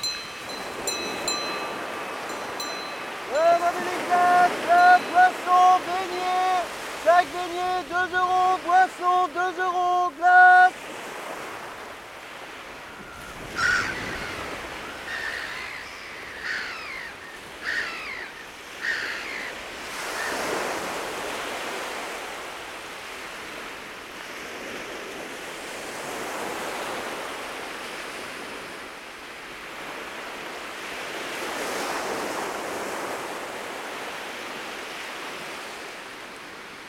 Berck - Plage
Ambiance estivale